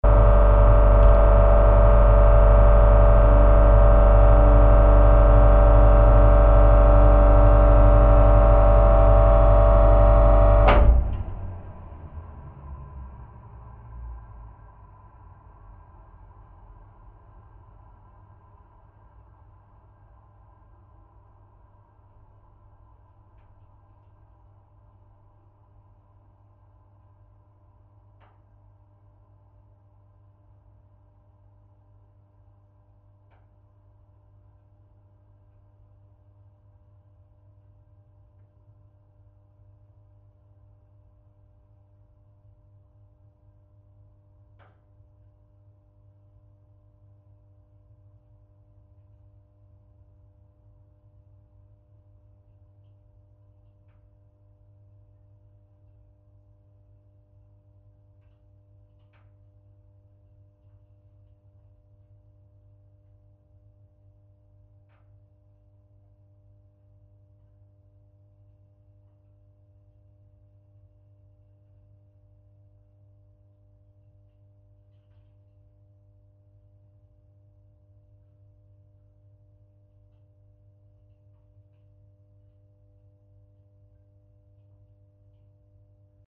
I heard this air condition which was very loud and walked off the path to record it. So I set up my equipment, stuck my contact mics on, and then managed to record it for about 10 seconds, before it turned off..
Recorded with two JrF contact microphones to a Tascam DR-680.